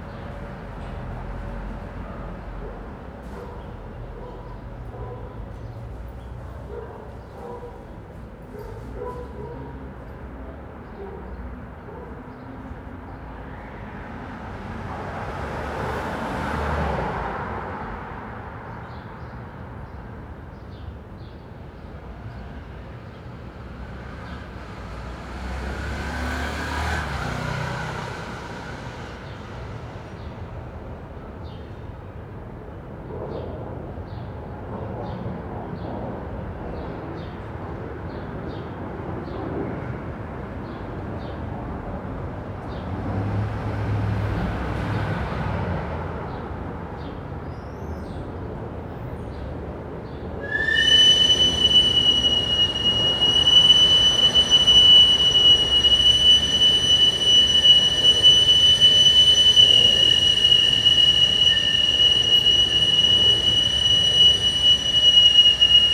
Pl. Popocatépetl, Hipódromo, Cuauhtémoc, Ciudad de México, CDMX, Mexiko - Soundsignature Pipe
A mobile street vendor with a potato grill using the hot air from his mobile oven to power his sound signature.
27 April, ~19:00